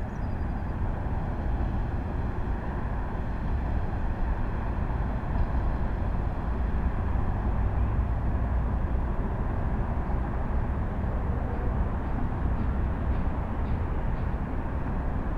canal, Drava river, Maribor - subtle noise of water flux
steady flow of water, slightly waved with southwestern winds, traffic hum from left and right side